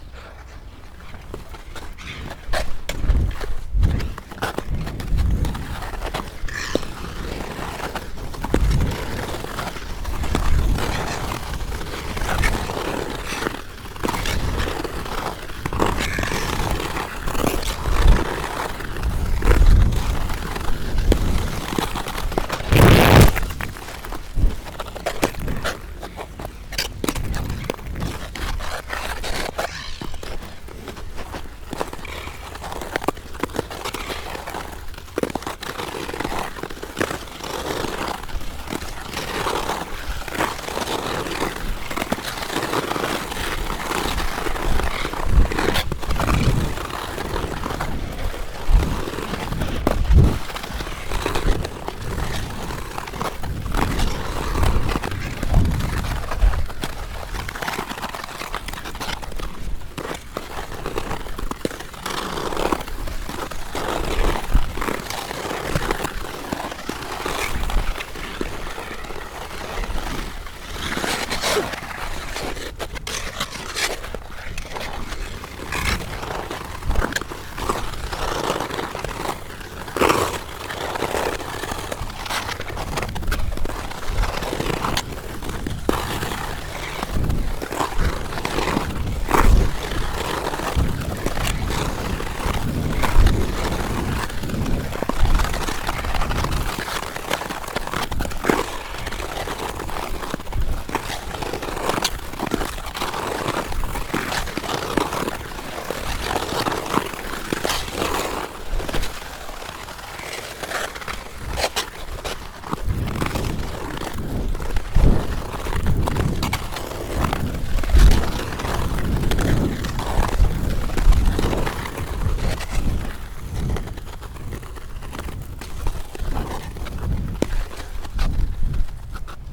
Olsztyn, Polska - Ice skating (2)
Ice skating with lavalier mics inside gloves. Zoom H4n.
Olsztyn, Poland, 3 February 2013, 17:50